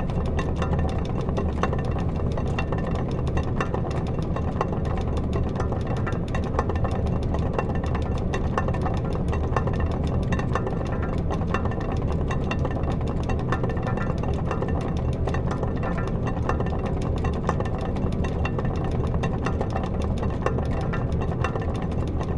Differdange, Luxembourg - Fan
In an underground mine, a very big fan (diameter 3 meters) is naturally turning with air. Because of the outside temperature, it's not turning everytime the same. For example, recordings made 20 years ago are very different.
France